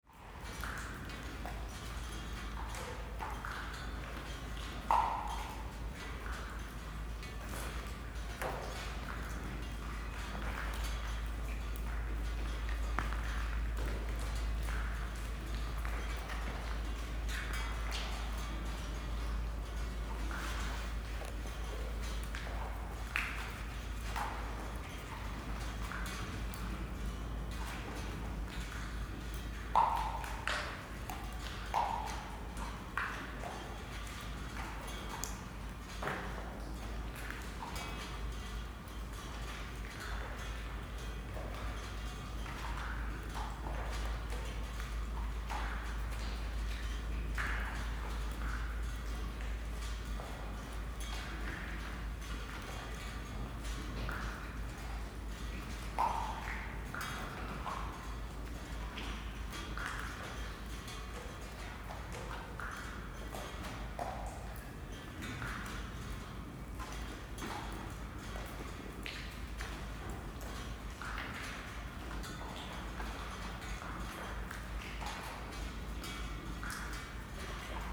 Dripping roof, Derelict games hall, Spreepark
Drips falling onto stone, metal and wood in the derelict games hall. Derelict former East Berlin fun fair now abandoned, overgrown and completely surreal.
Berlin, Germany, 10 December 2011